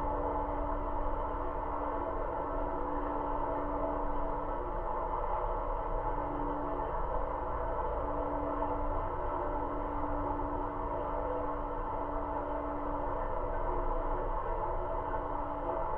Utena, Lithuania, bridge listening
small river evening listening through the railings of the bridge. recorded with geophone